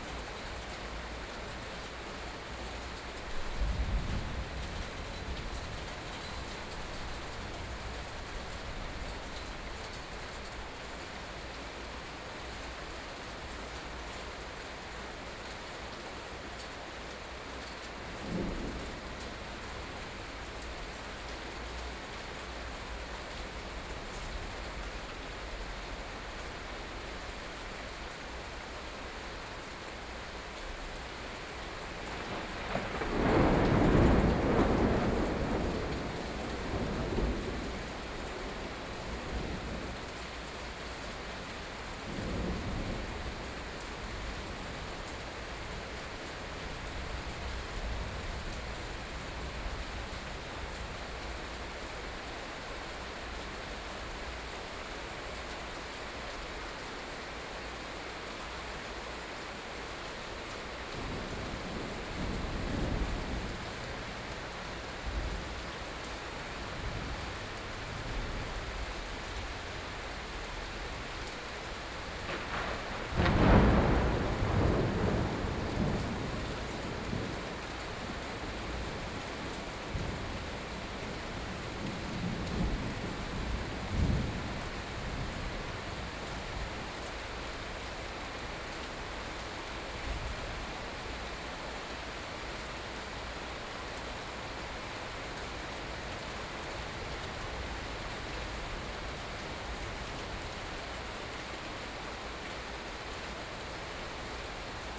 Beitou - Upcoming thunderstorm
Upcoming thunderstorm, Zoom H4n+ Soundman OKM II +Rode NT4, Binaural recordings
Taipei City, Taiwan